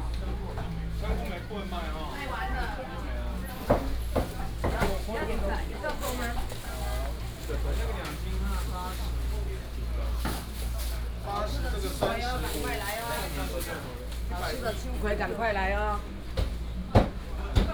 湖口鄉第一公有零售市場, Hsinchu County - Public market
In the Public market, vendors peddling, Binaural recordings, Sony PCM D100+ Soundman OKM II